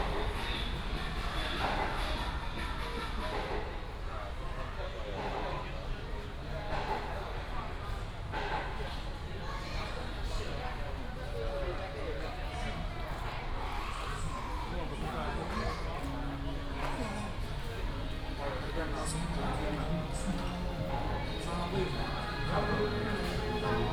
大台中環保市場, Beitun Dist., Taichung City - Flea market
Walking through the Flea market